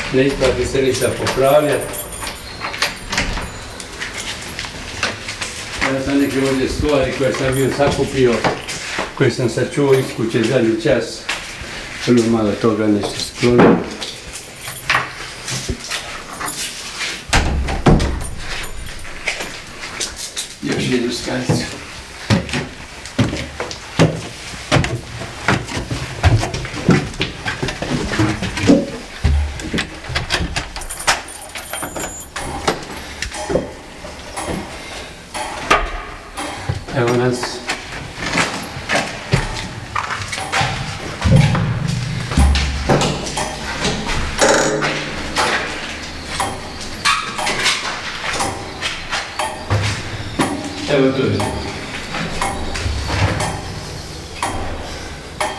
Dubrovnik, July 1992, climbing up the city bell tower - towards the end of war
Tonci Krasovac(73)leading us towards the top of the city bell tower and showing damages made by frequent shelling; by family tradition responsible for bells and the town clock, both wound up manually, he did it every day during the siege notwhistanding danger, his angina pectoris, one lost kidney and destroyed home. His bells and the local radio were the only sounds to be heard in the town without electricity -besides the sounds of bombardment. Kept spirits high.